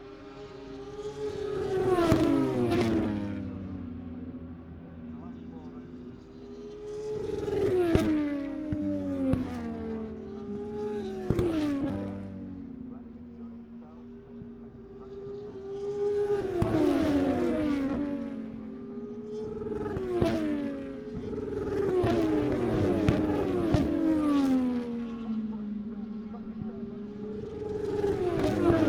Silverstone Circuit, Towcester, UK - british motorcycle grand prix 2021 ... moto two ...
moto two free practice one ... maggotts ... olympus ls 14 integral mics ...